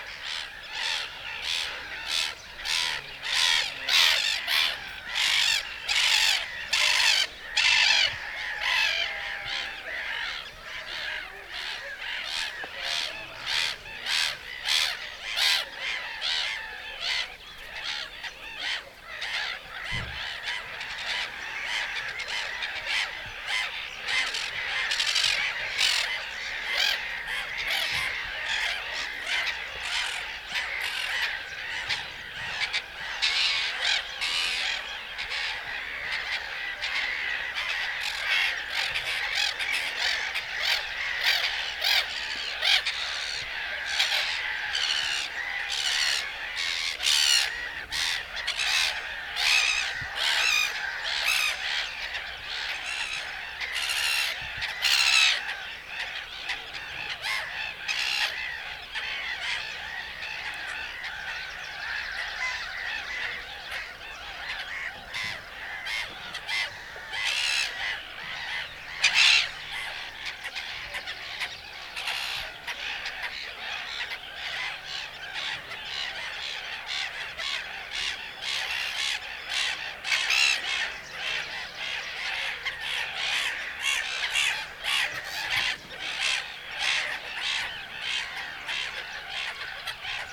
Smogulec, Zamczysko - bird island
hundreds of birds live on the artificial water reservoirs build for farming fish. On the reservoir in front of me was a little island, covered with shouting birds. After a few minutes a few of them flew towards me and started circling over me. A housing estate to the left, a car leaving, man working his grinder. (roland r-07)
wielkopolskie, RP, 9 June 2019, 09:16